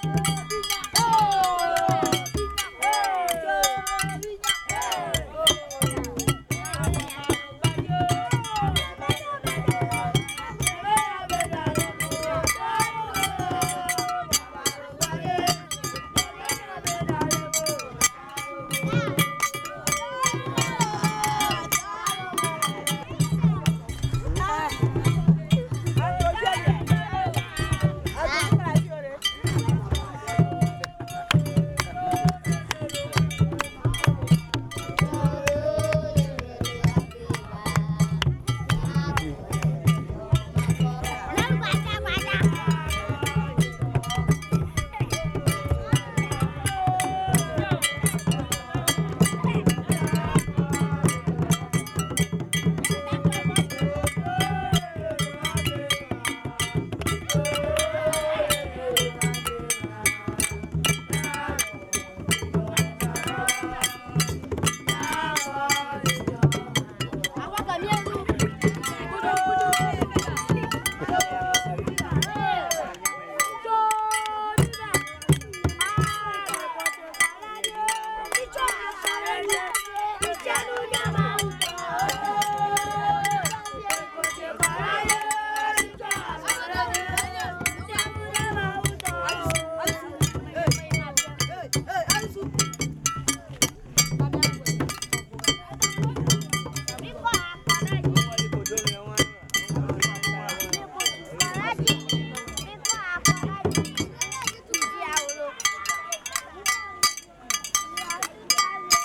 Agblor Link, Keta, Ghana - Childeren in Keta making fun and music part 2
Childeren in Keta making fun and music part 2 - 12'19
15 October 2004